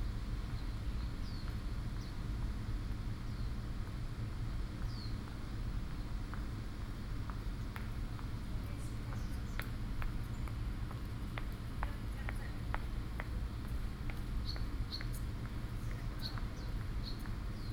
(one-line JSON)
{"title": "Fuxinggang Station, Beitou - Hot evening", "date": "2013-07-11 17:20:00", "description": "Seat beside the MRT Stations, Sony PCM D50 + Soundman OKM II", "latitude": "25.14", "longitude": "121.49", "altitude": "10", "timezone": "Asia/Taipei"}